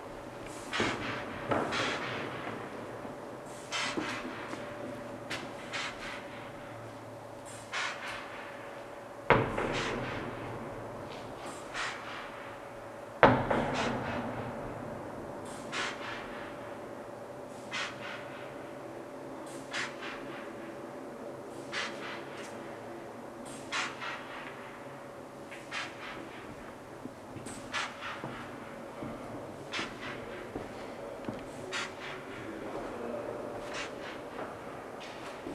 Inside a high tube architecture - the sound of a sound installation by Cgristoph de Boeck entitled "Surfaces" - part of the sound art festival Hear/ Here in Leuven (B).
international sound scapes & art sounds collecion
Vlaams-Brabant, Vlaanderen, België / Belgique / Belgien, 23 April 2022